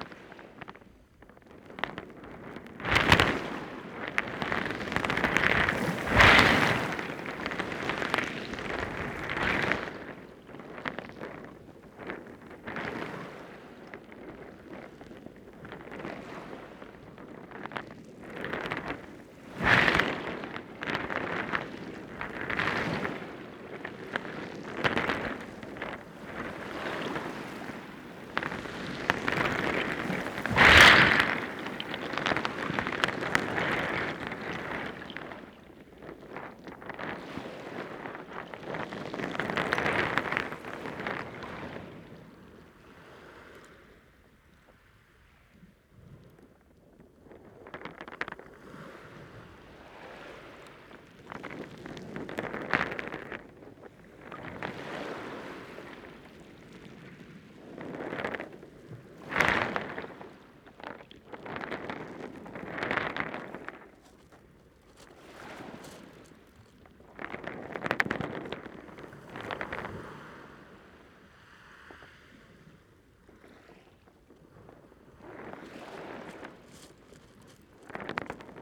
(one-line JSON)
{"title": "High tide waves heard from under the stones, Romney Marsh, UK - High tide waves heard from under the stones", "date": "2021-07-24 13:15:00", "description": "Recorded by a hydrophone (underwater microphone) in combination with normal mics this gives an impression of how it sounds to be amongst, or under, the stones as the waves break above.", "latitude": "50.92", "longitude": "0.98", "timezone": "Europe/London"}